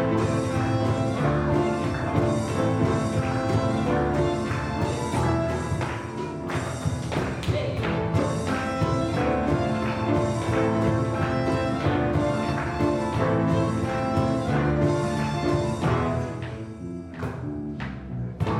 Music in the upper room.
Tech Note : Sony PCM-M10 internal microphones.
Région de Bruxelles-Capitale - Brussels Hoofdstedelijk Gewest, België / Belgique / Belgien, 18 June 2019